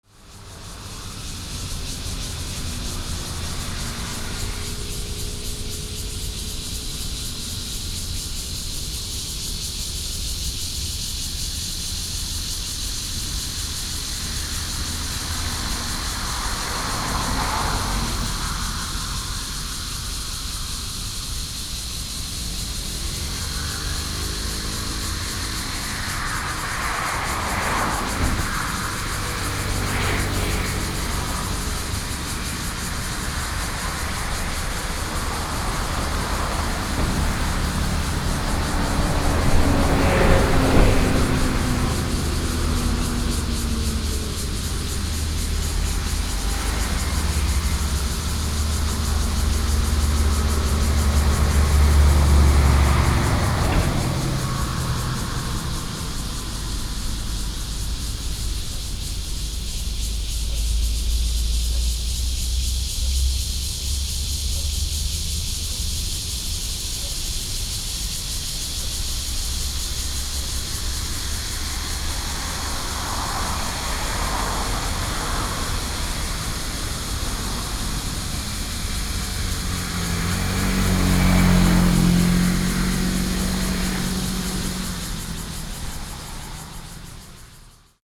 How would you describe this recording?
In the woods, It has now become residential, Traffic Sound, Cicadas cry, Sony PCM D50+ Soundman OKM II